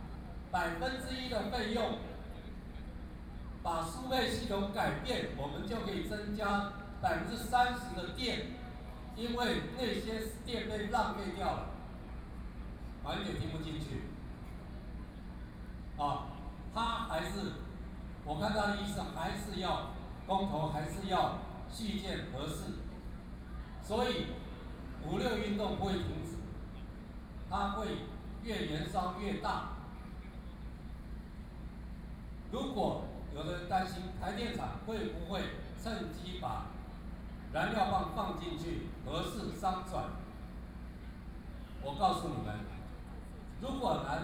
Different professionals are speeches against nuclear power, Zoom H4n+ Soundman OKM II
台北市 (Taipei City), 中華民國, 26 May 2013